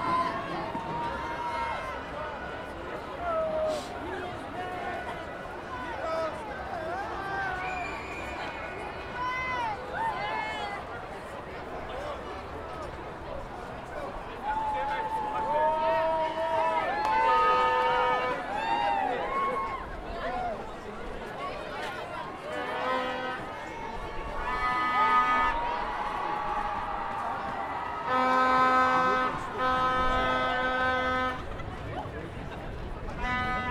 Maurice Lemonnierlaan, Brussel, België - Climate protests
What do we want? CLIMATE JUSTICE! When do we want it? NOW